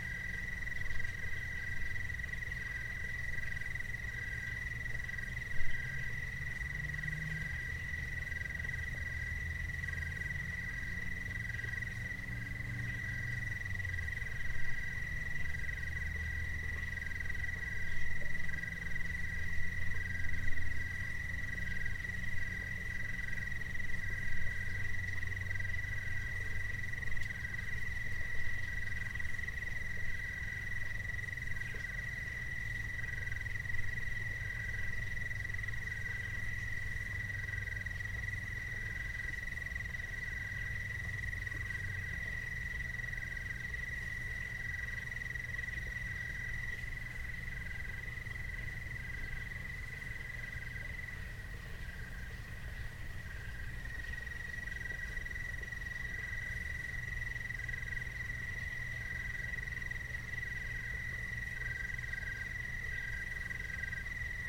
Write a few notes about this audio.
Scarlet Focusrite 2i2, Aston Origin, Shure SM81-LC mics, Night garden on Black Rd. (unspecified location for privacy reasons), insects, rare birds, a creek which flows alongside the road near the water well.